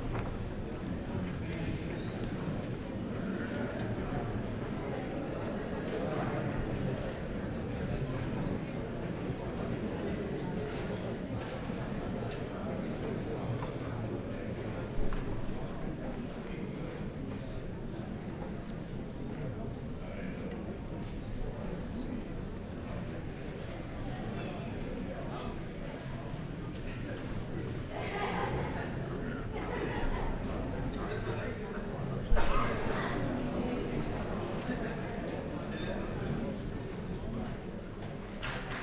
Himmelfahrtlirche, Gustav-Meyer-Allee, Berlin - before the concert
audience, murmur of voices before the concert, Himmelfahrtskirche Humboldthain
(Sony PCM D50, Primo EM172)